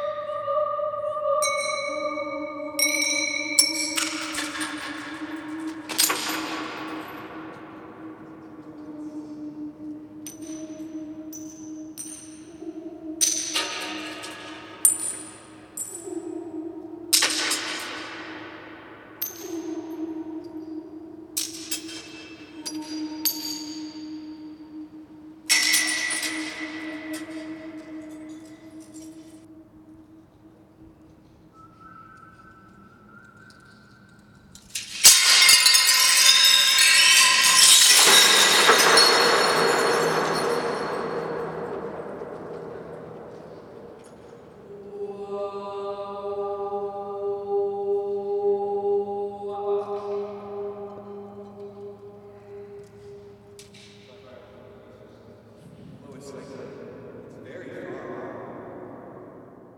Montreal: Lachine Canal: Silo - Lachine Canal: Silo
Broken leaded glass shards falling twelve stories down in an empty silo. A hatch in the floor that leads down, down, down; impossibly vast and dark. The only way to hear the size of the space is to sing and to throw, so we do. The structures around the silos crack and cave in, but the giant cement cylinders stand like Ozymandias at the edge of the canal.